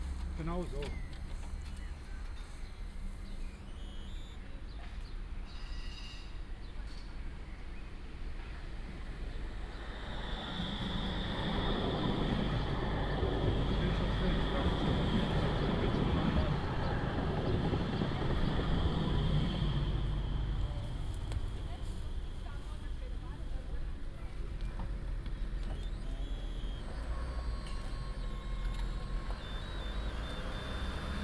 refrath, vürfelser kaule, bahnschranke
signalhorn für streckenarbeiter mittags, schliessen der bahnschranken, einfahrt der bagn, öfnnen der bahnschranken, verkehr
soundmap nrw - social ambiences - sound in public spaces - in & outdoor nearfield recordings